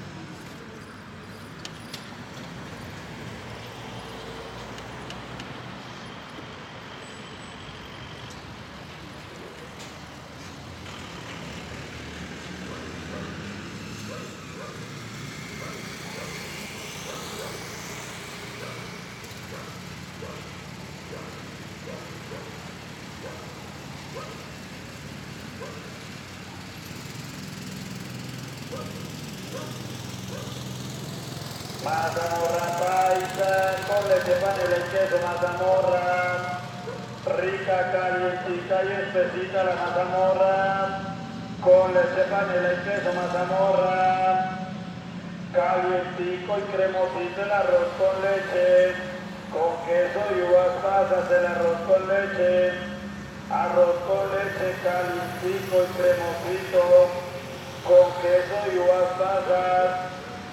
Cra., Suba, Bogotá, Colombia - PAISAJE SONORO SUBA - BOGOTÁ COLOMBIA
The soundscape was recorded in the town of suba at 10 am, in a residential area, it is a place with little traffic, but nevertheless you can hear people, a dog, cars and the man who passes by selling his product.
8 November 2021, 10:00